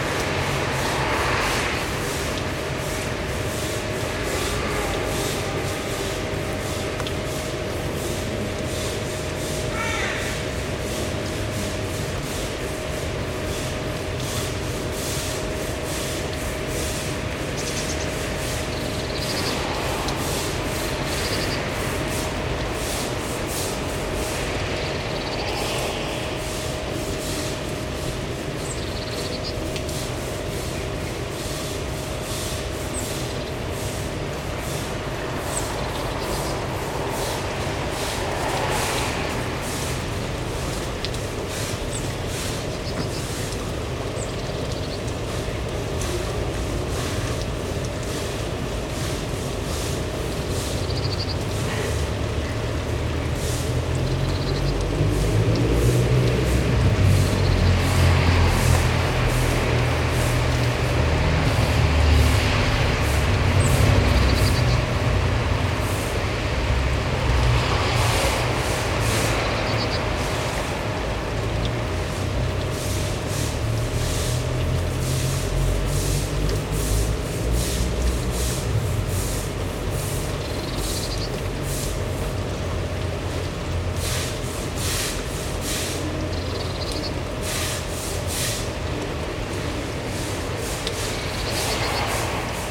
{"title": "Willem Buytewechstraat, Rotterdam, Netherlands - Rain-dry transition", "date": "2021-07-26 19:00:00", "description": "Rain-dry transition. In this recording, you can listen to a few cars and airplanes passing by and a couple of thunders. When the rain stops, someone starts to sweep the floor of their backyard.\nRecorded with parabolic mic Dodotronic.", "latitude": "51.91", "longitude": "4.46", "altitude": "4", "timezone": "Europe/Amsterdam"}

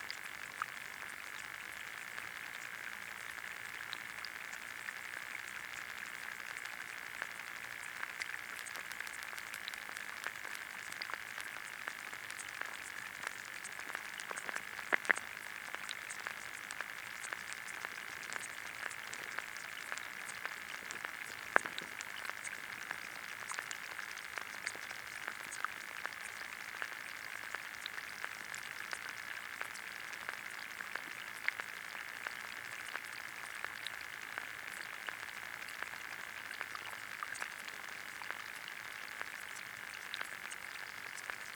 {"title": "Elgar Rd S, Reading, UK - lagoa dos Mansos", "date": "2018-06-08 15:02:00", "description": "hidrophone recording at Lagoa dos Mansos", "latitude": "51.45", "longitude": "-0.97", "altitude": "40", "timezone": "Europe/London"}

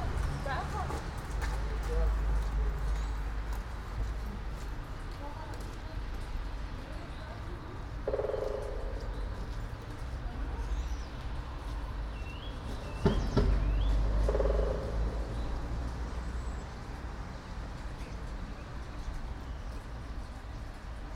{"title": "all the mornings of the ... - mar 30 2013 sat", "date": "2013-03-30 07:15:00", "latitude": "46.56", "longitude": "15.65", "altitude": "285", "timezone": "Europe/Ljubljana"}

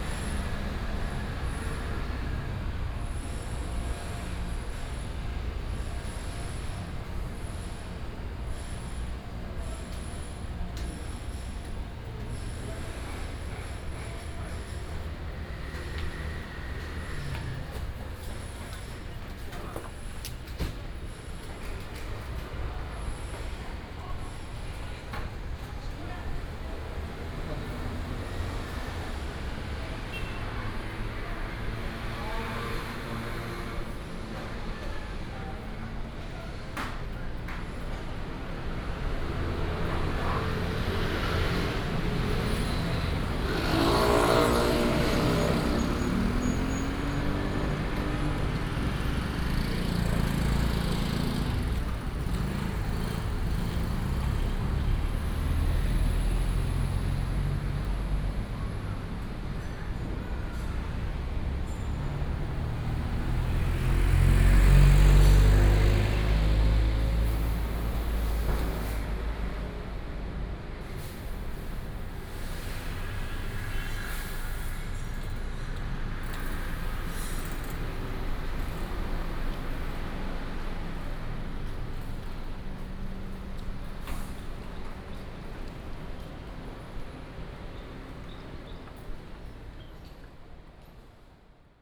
{"title": "Yingshi Rd., Banqiao Dist., New Taipei City - Walking on the road", "date": "2015-07-29 15:56:00", "description": "Walking on the road, Traffic Sound", "latitude": "25.02", "longitude": "121.46", "altitude": "20", "timezone": "Asia/Taipei"}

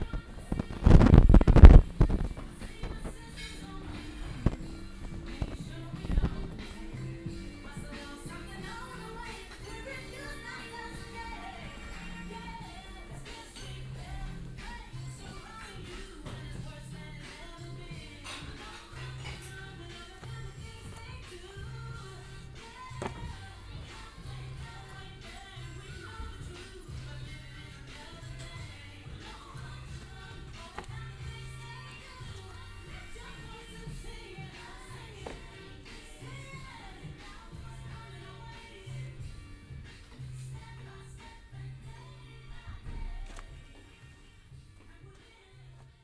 {"title": "cologne, bruesselerplatz, hallmackenreuther, mittags", "date": "2008-06-11 20:43:00", "description": "inside the cafe room, gang und nutzung der herrentoilette, küchengeräusche, hintergrundmusik, mittags\nsoundmap nrw\n- social ambiences/ listen to the people - in & outdoor nearfield recordings", "latitude": "50.94", "longitude": "6.93", "altitude": "59", "timezone": "Europe/Berlin"}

